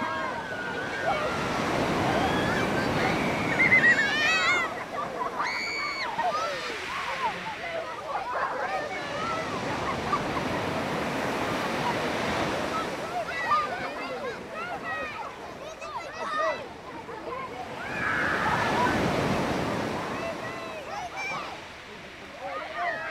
on Burton Bradstock beach - waves, kids, screams
sat on a beach - recorded on Burton Bradstock beach in Dorset. Near to waves crashing onto beach with kids screaming and laughing, and a little tears.
Burton Bradstock, Dorset, UK, 4 August 2011